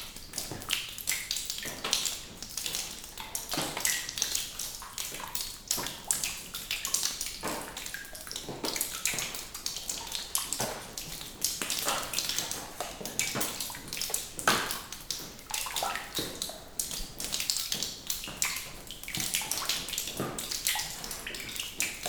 Drips of water falling on the floor and on plastic covers in a cave (mushroom bed) with Zoom H6